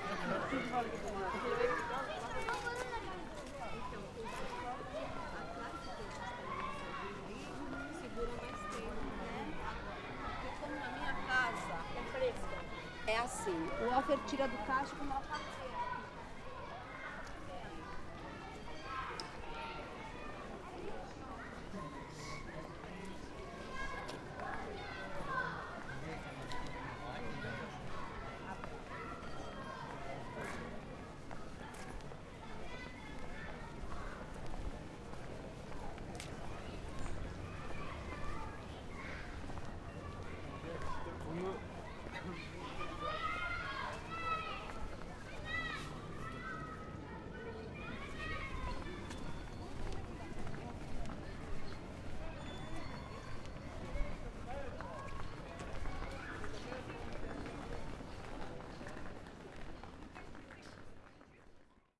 Athens, Dionysiou Areopagitou street - kids chasing hackney cab
a bunch of excited kids rushing out of a side street to chase a hackney cab. (sony d50)
6 November, ~12:00